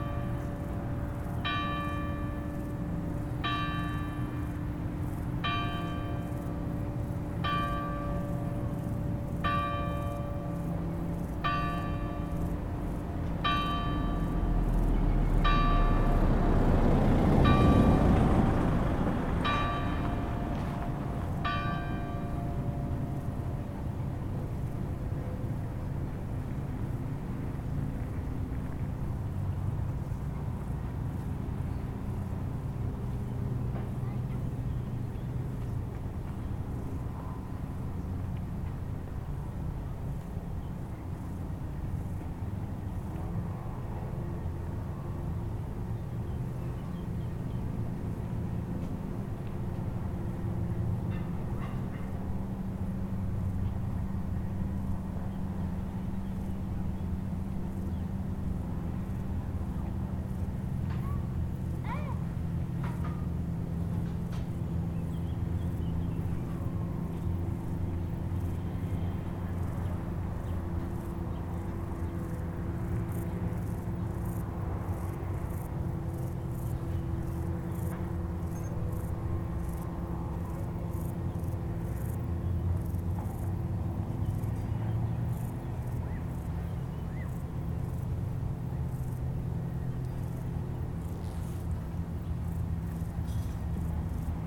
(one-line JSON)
{"title": "Rte du Port, Bourdeau, France - Cloche de Bourdeau", "date": "2020-07-19 12:00:00", "description": "Sonnerie de la cloche de la chapelle de Bourdeau à midi. Beaucoup de bruit de circulation automobile.", "latitude": "45.68", "longitude": "5.85", "altitude": "321", "timezone": "Europe/Paris"}